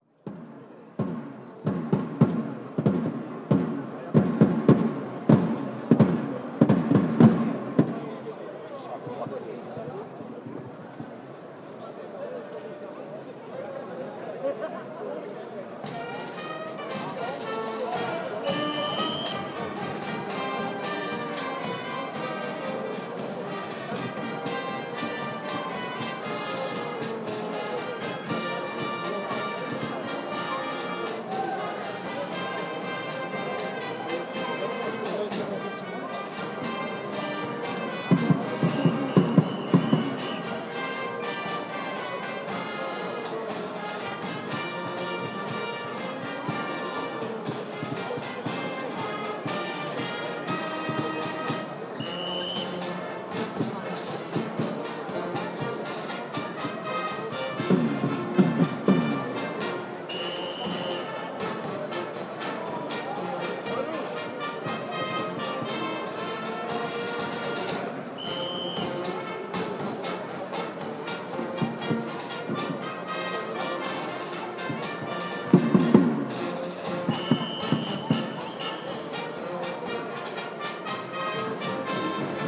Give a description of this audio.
The MPK (urban transport company in Lodz) Orchestra plays on the final of the polish rugby extraleague, Final match: Budowlani Łódź vs Lechia Gdańsk